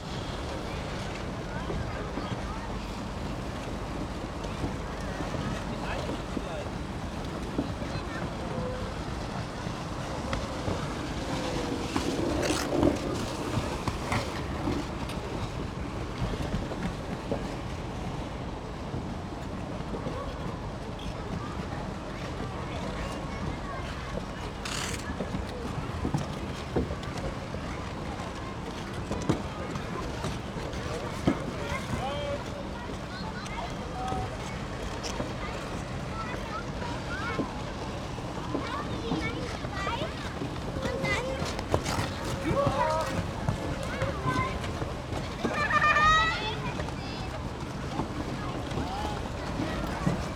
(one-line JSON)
{"title": "Mediapark, Köln - temporary ice skating", "date": "2014-01-05 12:05:00", "description": "temporary ice skating area at Mediapark Köln, as part of a fake winter market. no snow, 10°C\n(PCM D50, Primo EM172)", "latitude": "50.95", "longitude": "6.94", "altitude": "59", "timezone": "Europe/Berlin"}